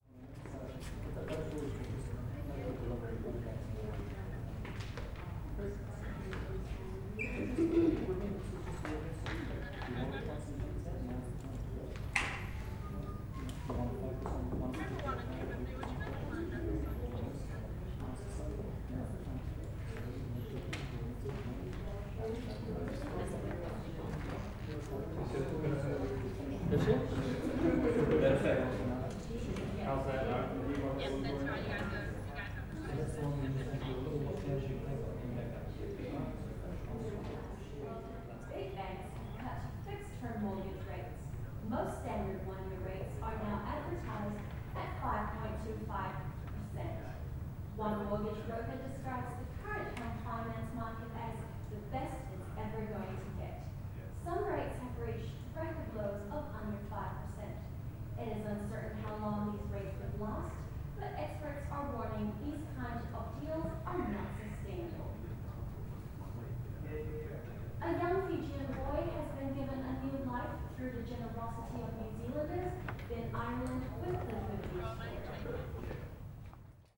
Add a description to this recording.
ernakulam, promenade, evening, music